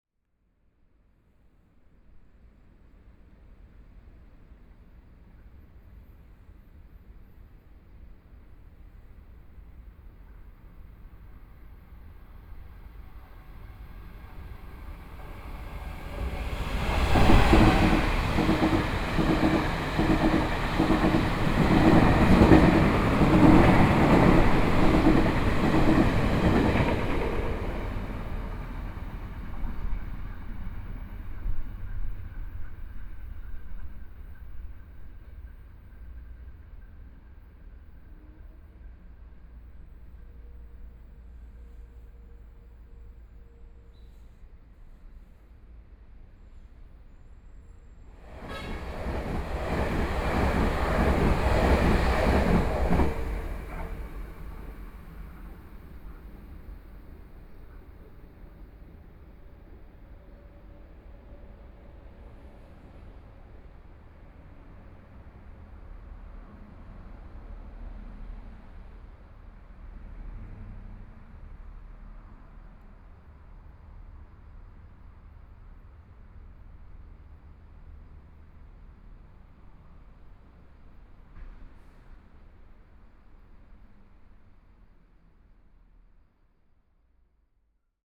Wai'ao Station, Toucheng Township - Train traveling through
Waiting at the train station platform, Train traveling through, Binaural recordings, Zoom H4n+ Soundman OKM II